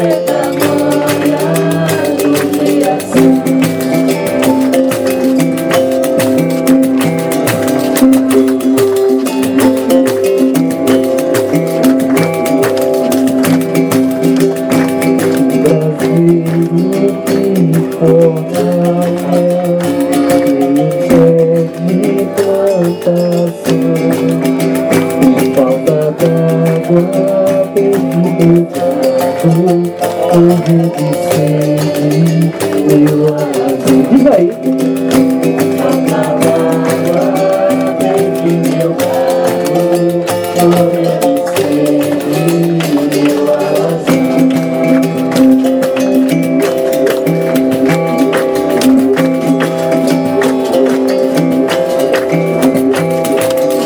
Brazil, Bahia, Salvador - Artistas de Rua - Berimbaus Afinados
Caminhando pela orla da praia da Barra em Salvador, me deparo com vários artistas de rua tocando, cantando, brincando, atuando, recitando poesia. Esse áudio é de uma orquestra de berimbaus afinados chamado Oba DX.
Gravado com um simples gravador de mão Sony ICD PX312
16 March 2014, 19:51